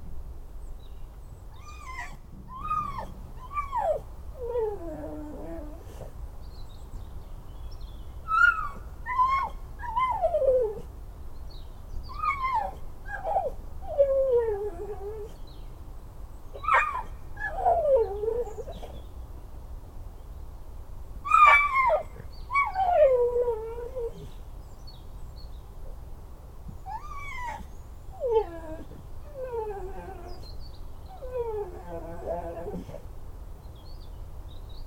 This is her dog, Bingo. It's an old american staff dog. If you think it's a chihuahua or a small poodle, this could be normal !
Bingo is alone in his kennel. The beginning is quite soundless.
0:13 mn - If you think this is a frog, you're wrong. Bingo was thinking to be alone and it's simply belching !!
1:55 - All this is too long, and Bingo begins to cry and to bark all this infinite sadness.
Bingo in definitive is a quite strange dog ;-)